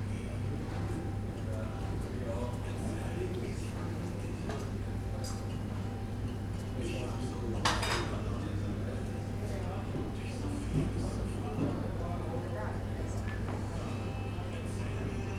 Berlin Tegel airport terminal D
early morning ambience at terminal D, Tegel airport.